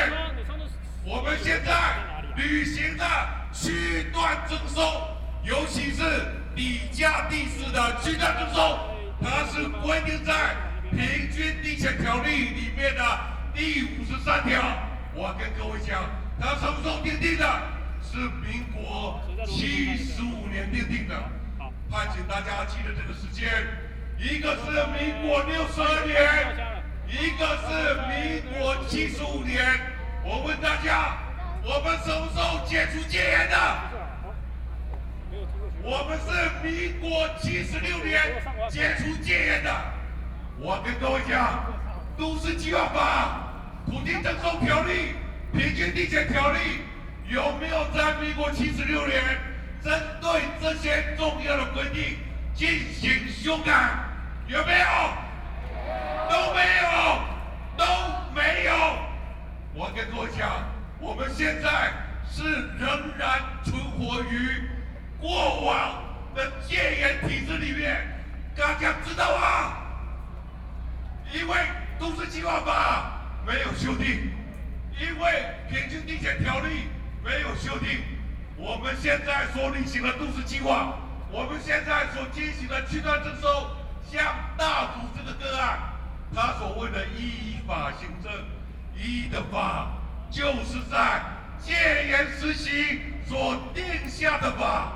Ketagalan Boulevard, Zhongzheng District, Taipei City - speech
Excitement and enthusiasm speech, Against the Government, Sony PCM D50 + Soundman OKM II
Zhongzheng District, Taipei City, Taiwan, 18 August, ~21:00